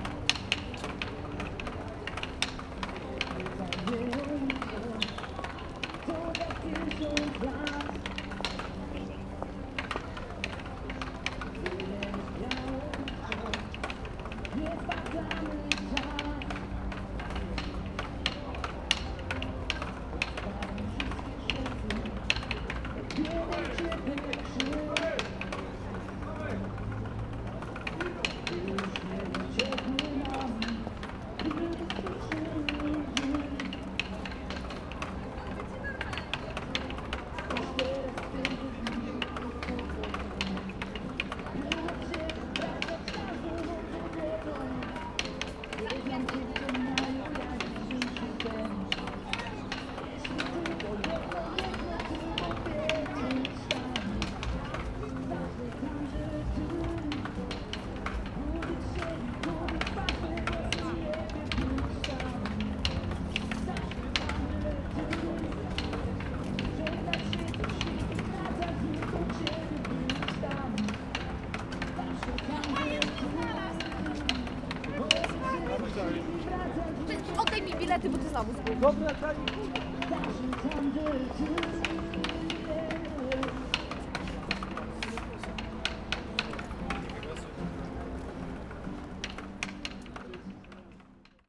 Warsaw, entrance Centrum Metro Station - wooden crate
a cold, gray November afternoon. a man frenziedly hitting on a wooden crate. street musician playing a worn down song. plenty of people walking in all directions.
Warszawa, Poland